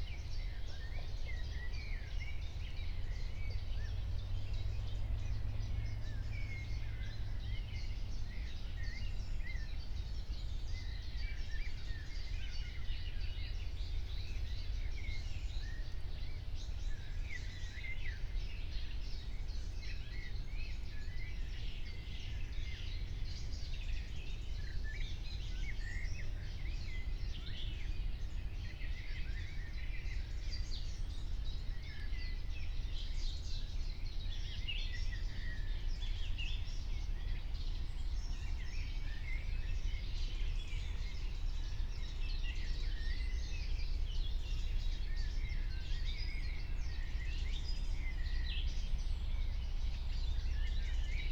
04:00 Berlin, Wuhletal - Wuhleteich, wetland
Deutschland, 17 June 2021, 04:00